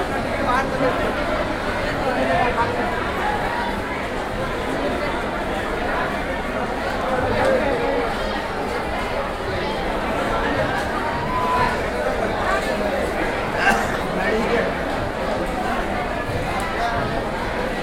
Mumbai, Dadar central, Queue in the hall
India, Maharashtra, Mumbai, Dadar, Railway station, hall, queue, crowd
Maharashtra, India